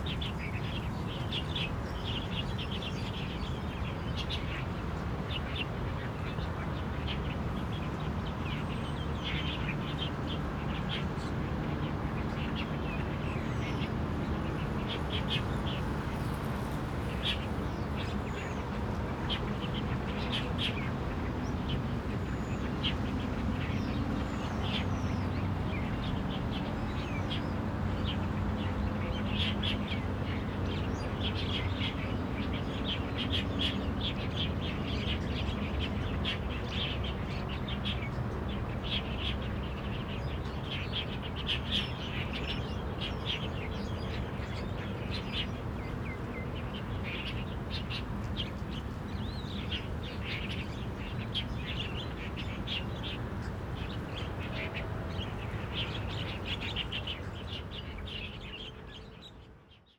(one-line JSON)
{"title": "Sec., Huanhe W. Rd., Zhonghe Dist. - In Riverside Park", "date": "2012-01-19 14:16:00", "description": "In Riverside Park, Bird calls, Play basketball, Traffic Sound\nRode NT4+Zoom H4n", "latitude": "25.01", "longitude": "121.49", "altitude": "1", "timezone": "Asia/Taipei"}